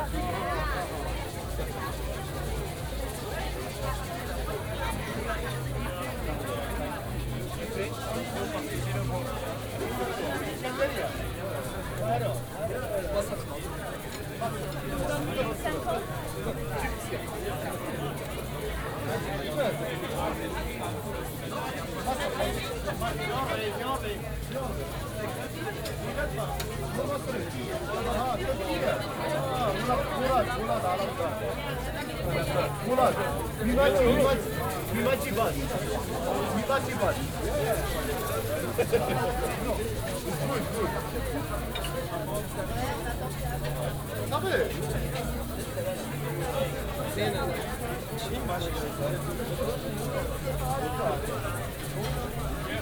open restaurant area on place Jemaa El Fna, sound of steam and cooking pots, kitchen and restaurant ambience
(Sony PCM D50, OKM2)
Morocco, 24 February 2014